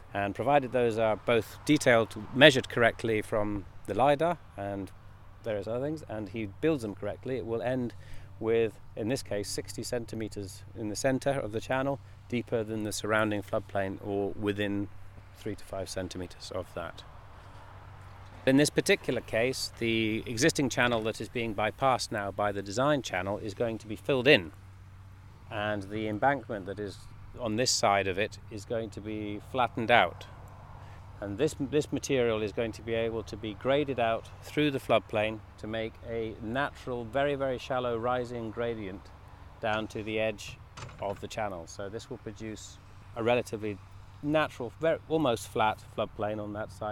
Eddleston, Scottish Borders, UK - Eddleston Remeandering, Ecohydrologist - A Bowden Smith
Field interview with ecohydrologist Andrew Bowden Smith on the Eddleston Water near Peebles. Andrew works for a team who are restoring meanders to a stretch of river which was artificially straightened in the 19th century. This is an experimental project aimed partly at flood mitigation and also to meet the EU's Water Framework Directive. He talks about the challenges of designing a riverbed to emulate the waterflow of a natural river. Google map shows the straight water course, which now has several meanders and looks very different!